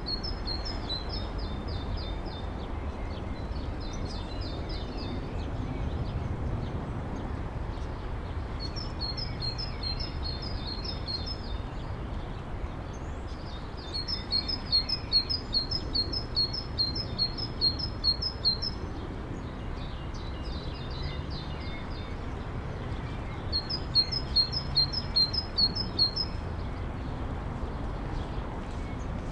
TP Rauenberg
...DER TRIGONOMETRISCHE PUNKT 1. ORDNUNG RAUENBERG IST DER AUSGANGSPUNKT FÜR DIE BERECHNUNG DER GEOGRAPHISCHEN KOORDINATEN DES PREUSSISCHEN HAUPTDREIECKSNETZES. ALS ZENTRALPUNKT BESTIMMT ER AUCH DIE LAGE UND DIE ORIENTIERUNG DES HEUTIGEN DEUTSCHEN HAUPTDREIECKSNETZES AUF DEM ALS BEZUGSFLÄCHE GEWÄHLTEN BESSEL-ELLIPSOID.
LÄNGE UND BREITE (LAGE) SOWIE AZIMUT (ORIENTIERUNG) WURDEN AUS ASTRONOMISCHEN MESSUNGEN DER JAHRE 1853 UND 1859 ABGELEITET...